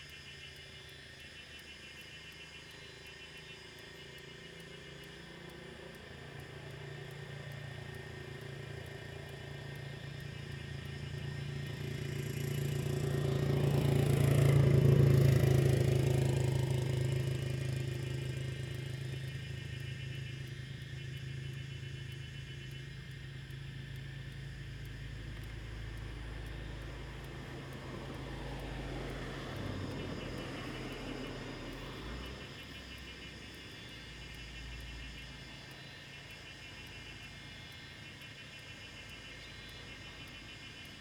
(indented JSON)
{
  "title": "水璉村, Shoufeng Township - Cicadas sound",
  "date": "2014-08-28 17:26:00",
  "description": "Insects sound, Cicadas sound, Beside the mountain road, Traffic Sound, Very Hot weather\nZoom H2n MS+XY",
  "latitude": "23.75",
  "longitude": "121.56",
  "altitude": "208",
  "timezone": "Asia/Taipei"
}